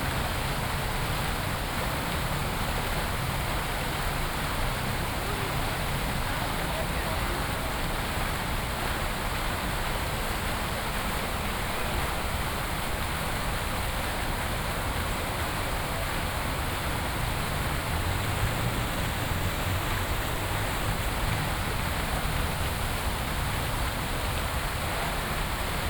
Athen, Syntagma Square - fountain
fountain on Syntagma square
(Sony PCM D50, OKM2)